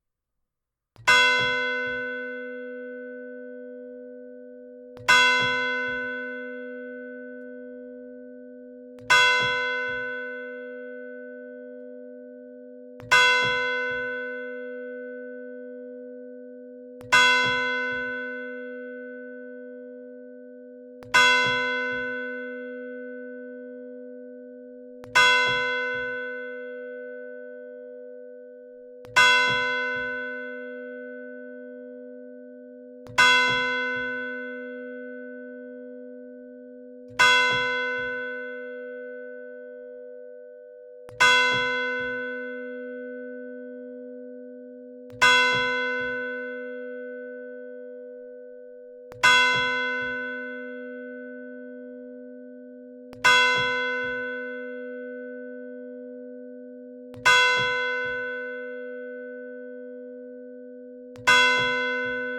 Le Bourg, Tourouvre au Perche, France - Bivilliers - Église St-Pierre
Bivilliers (Orne)
Église St-Pierre
Le Glas